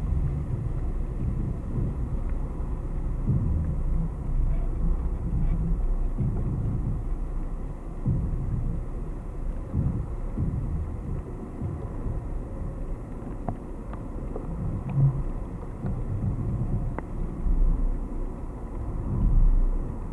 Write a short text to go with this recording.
contact microphones placed on a wooden beam of abandoned warehouse